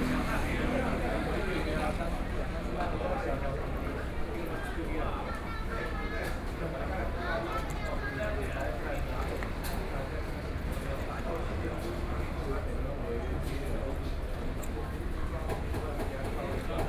中山區復華里, Taipei City - Noon break
Traffic Sound, Noon break, Convenience Store, Workers break
Zhongshan District, Taipei City, Taiwan, May 2014